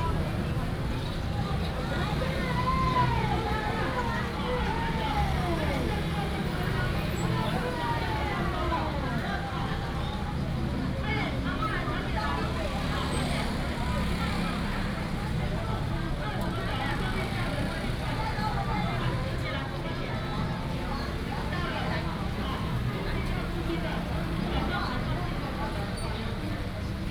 Zhongshan Rd., Dalin Township - vendors peddling
vendors peddling, Market selling sound, lunar New Year, traffic sound
Binaural recordings, Sony PCM D100+ Soundman OKM II
Chiayi County, Taiwan, 15 February 2018